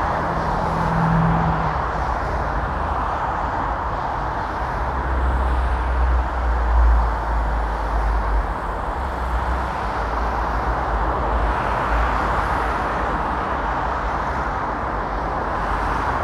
{
  "title": "Grunewald, Berlin, Germany - Directly above the motorway traffic",
  "date": "2014-06-15 14:13:00",
  "description": "On the footbridge above the motorway. Traffic is very busy. It is Sunday so all cars, no trucks.",
  "latitude": "52.50",
  "longitude": "13.28",
  "altitude": "42",
  "timezone": "Europe/Berlin"
}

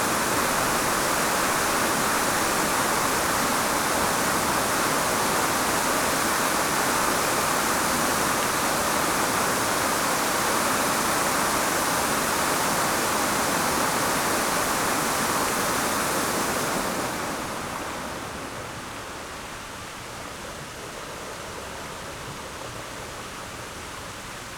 {
  "title": "Alnwick, UK - Grand Cascade ...",
  "date": "2016-11-14 12:30:00",
  "description": "Alnwick Gardens ... Grand Cascade ... lavalier mics clipped to baseball cap ...",
  "latitude": "55.41",
  "longitude": "-1.70",
  "altitude": "60",
  "timezone": "Europe/London"
}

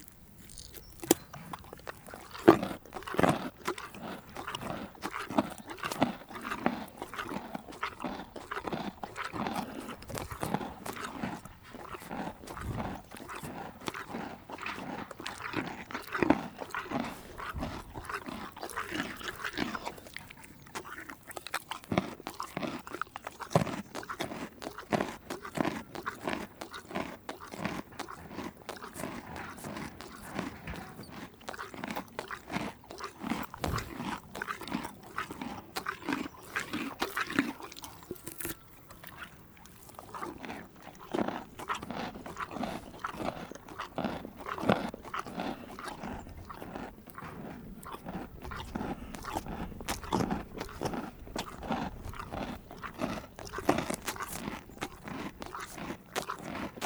{
  "title": "Lombron, France - Donkey eating",
  "date": "2017-08-15 09:00:00",
  "description": "In my huge 'animals eating' collection, this is here the turn of Ziggy, the donkey, eating carrots. Not easy to record, as Ziggy breathes also using the mouth, it's quite different from the horse. For sure, it's yet another disgusting record of an umpteenth victim animal undergoing the placement of two microphones nearly inside the mouth !",
  "latitude": "48.10",
  "longitude": "0.40",
  "altitude": "81",
  "timezone": "Europe/Paris"
}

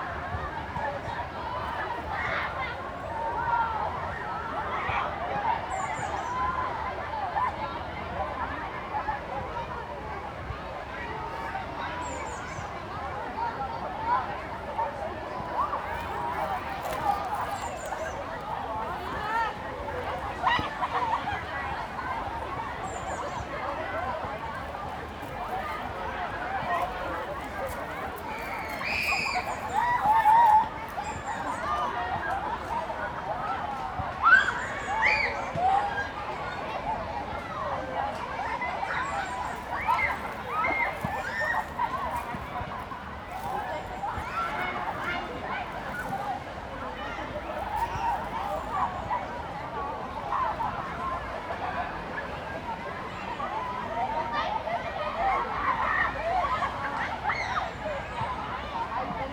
{
  "title": "Gertrudstraße, Berlin, Germany - Enjoying open air swimming",
  "date": "2021-06-16 16:48:00",
  "description": "Such beautiful warm weather - 28C, sun and blue sky. Kids, and others, enjoying open air swimming pools is one of Berlin's definitive summer sounds. Regularly mentioned as a favourite. The loudspeaker announcements reverberate around the lake.",
  "latitude": "52.55",
  "longitude": "13.48",
  "altitude": "53",
  "timezone": "Europe/Berlin"
}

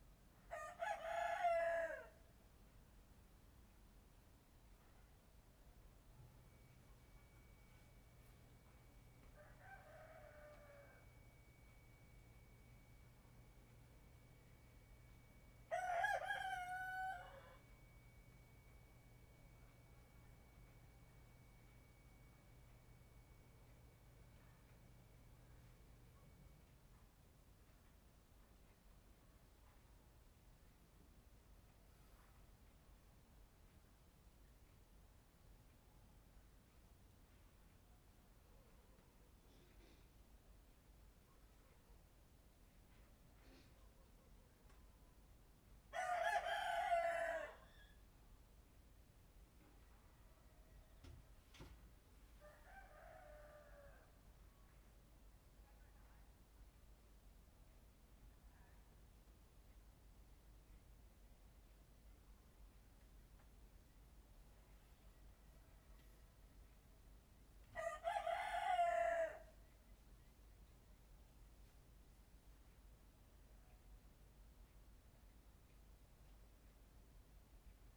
{
  "title": "Shueilin Township, Yunlin - Early in the morning",
  "date": "2014-02-01 05:30:00",
  "description": "On the second floor, Early in the morning, Chicken sounds, Zoom H6 M/S",
  "latitude": "23.54",
  "longitude": "120.22",
  "altitude": "6",
  "timezone": "Asia/Taipei"
}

